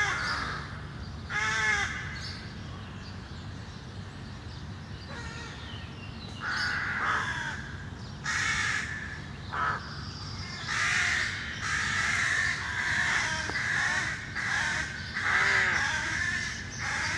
Bd Robert Barrier, Aix-les-Bains, France - Corbotière
Beaucoup d'animation dans une corbeautière maintenant disparue suite à la construction d'immeubles.
France métropolitaine, France